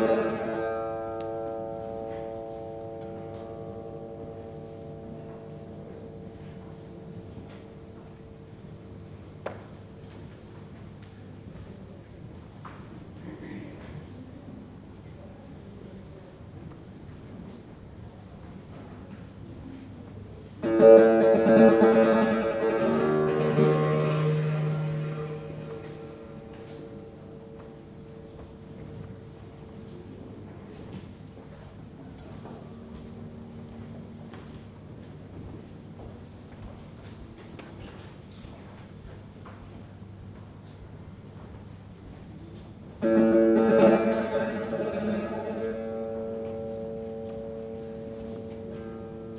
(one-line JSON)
{"date": "2010-09-10 12:15:00", "description": "Two sound sculptures in Centre Georges Pompidou. Recording starts with Musicale (1977) bij Takis followed by Couleurs sonores no. 3 (1966) by Gregorgio Vardanega around 155.", "latitude": "48.86", "longitude": "2.35", "altitude": "58", "timezone": "Europe/Paris"}